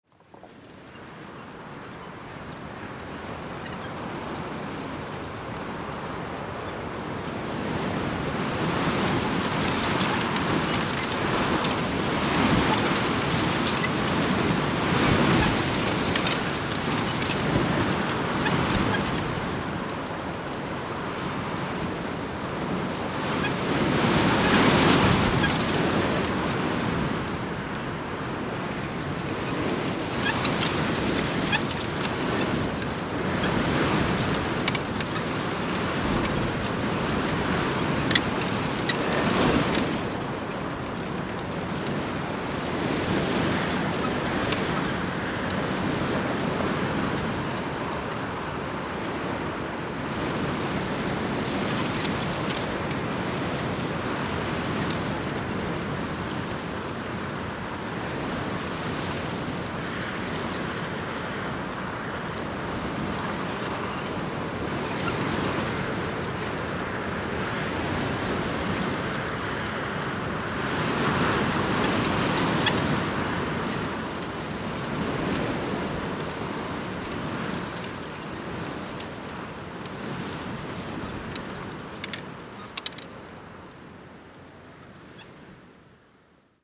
Digulleville, France - Wind in a bush
Strong wind recorded inside a bush, Zoom H6